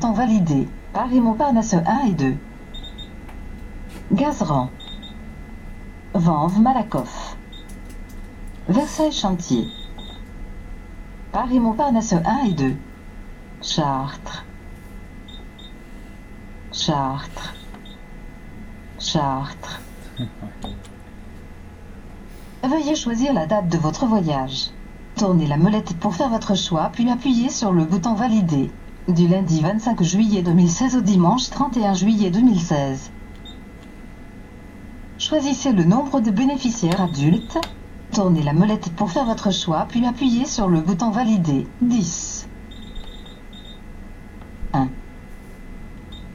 Taking a train ticket to the automatic machine, after coming back from the platform to Chartres.
Maintenon, France, July 2016